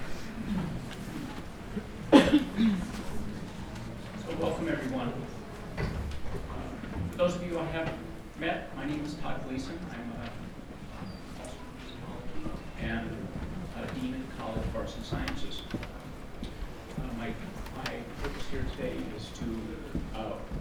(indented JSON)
{
  "title": "neoscenes: before Memorial",
  "date": "2012-01-21 13:50:00",
  "description": "Memorial for Garrison Roots",
  "latitude": "40.01",
  "longitude": "-105.27",
  "altitude": "1646",
  "timezone": "America/Denver"
}